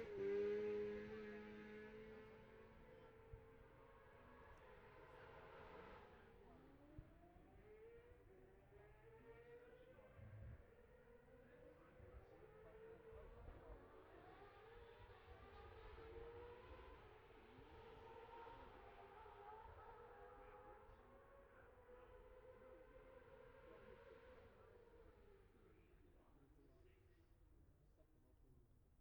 Jacksons Ln, Scarborough, UK - olivers mount road racing 2021 ...
bob smith spring cup ... F2 sidecars qualifying ... luhd pm-01 mics to zoom h5 ...